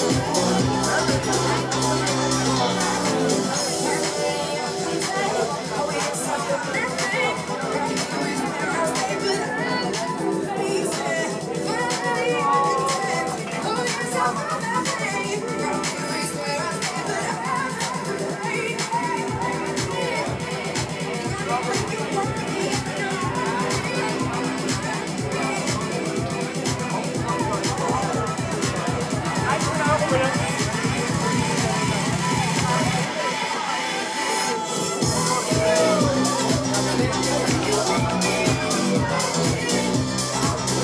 partying people at one of the most famous bars/clubs in berlin
the city, the country & me: may 25, 2015
Berlin, Germany, 25 May 2015, ~02:00